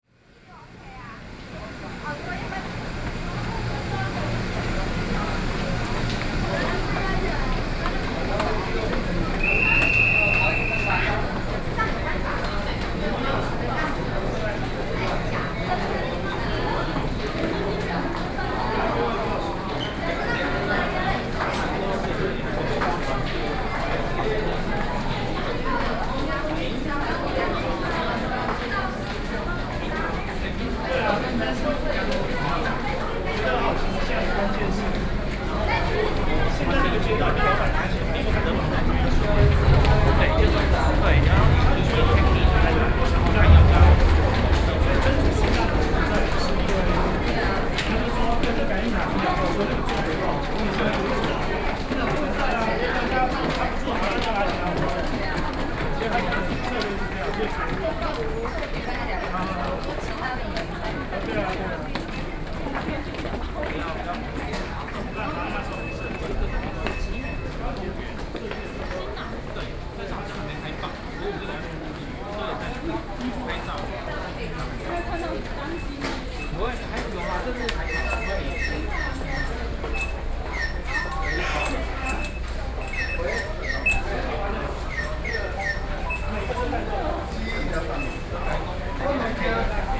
Taoyuan Station, Taiwan - Soundwalk
After getting off from the platform go through the underpass railway station, Sony PCM D50 + Soundman OKM II